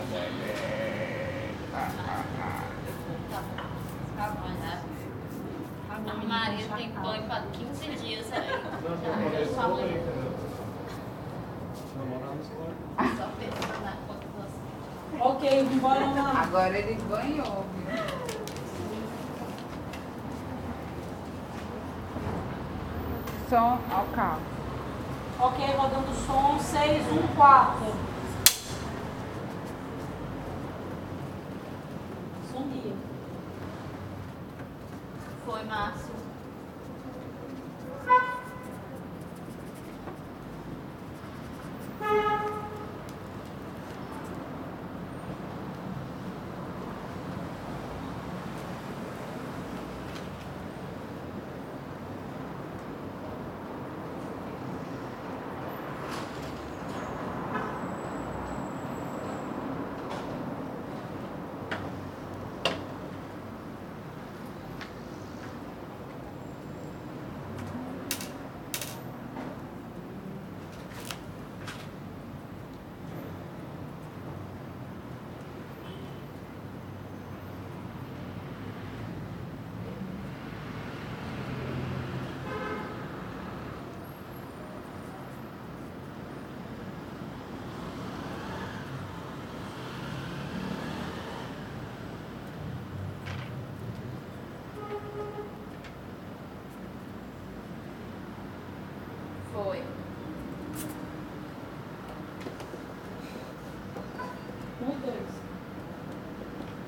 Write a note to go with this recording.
Paisagem Sonora de um prédio da rua Joaquim Nabuco na cidade de Fortaleza. Gravação do filme "O que tem na caixa?" de Angélica Emília. Soundscape - Building on the Joaquim Nabuco Street in Fortaleza.